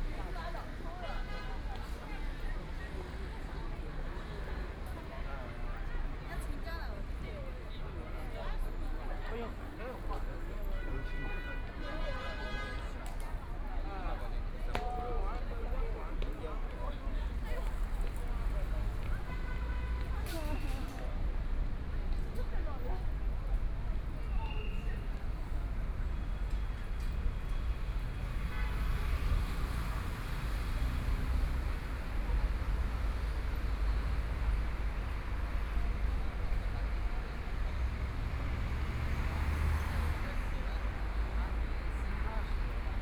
People's Square Park, Shanghai - on the road
Walking on the road, There are people on the street singing, Walking across the two regional parks, Binaural recording, Zoom H6+ Soundman OKM II